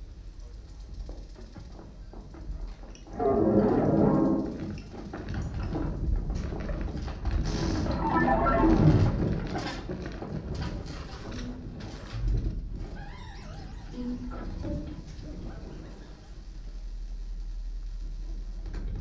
cologne, deutz mülheimer str, gebäude, visual sound festival, michael vorfeld - koeln, deutz mülheimer str, gebäude 9, visual sound festival, die schrauber
soundmap nrw: social ambiences/ listen to the people - in & outdoor nearfield recordings